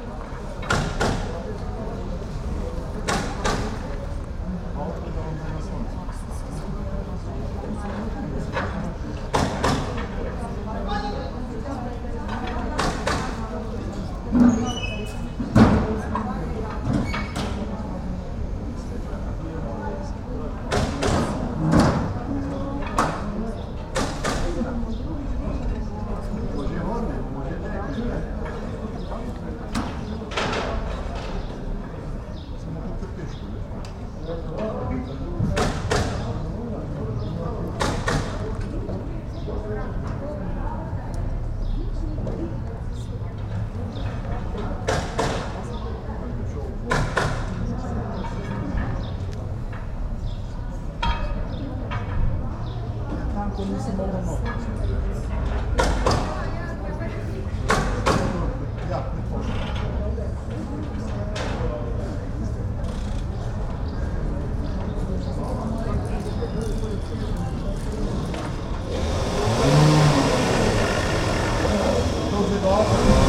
Ptuj, Slovenia - ptuj main square
recorded from the steps of the town theatre, amongst many outdoor cafes, and continuing construction